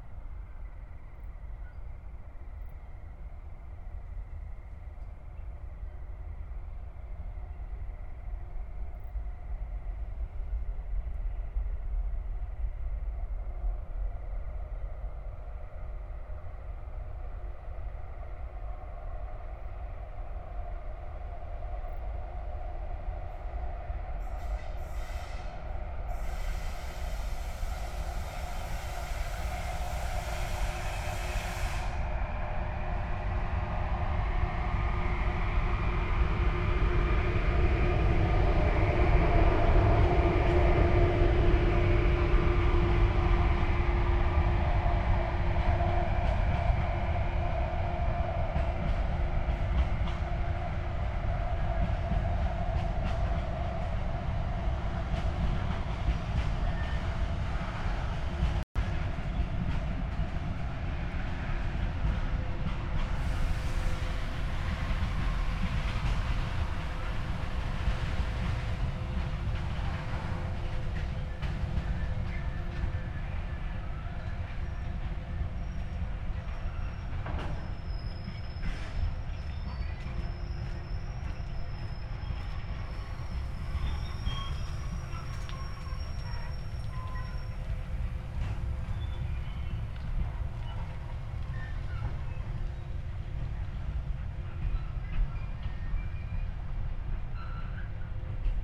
Stadtgarten, Köln - tree crickets, trains
tree crickets, lower pitched because temperature, a long freight train, among others.
(Tascam iXJ2 / iphone, Primo EM172)